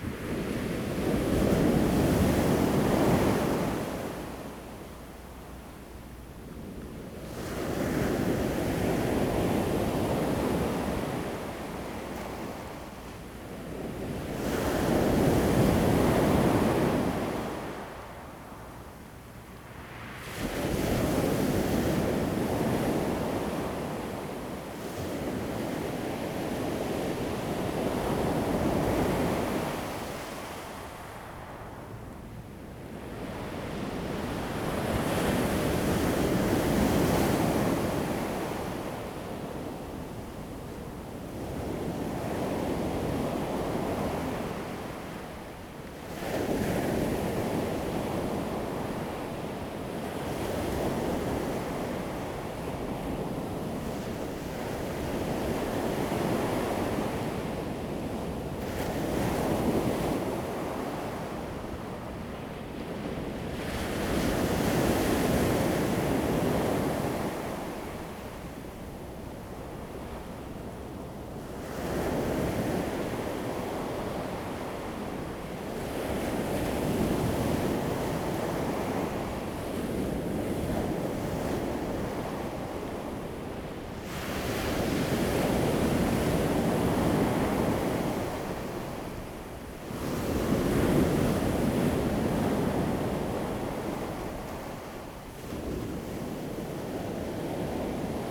新城鄉順安村, Hualien County - Sound of the waves
Sound of the waves, Aircraft flying through, The weather is very hot
Zoom H2n MS+XY